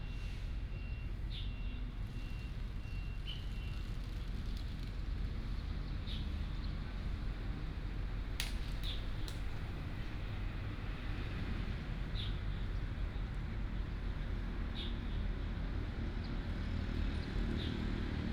空軍十五村, Hsinchu City - Clap and birds sound
in the park, Birds sound, Clap sound, Formerly from the Chinese army moved to Taiwans residence, Binaural recordings, Sony PCM D100+ Soundman OKM II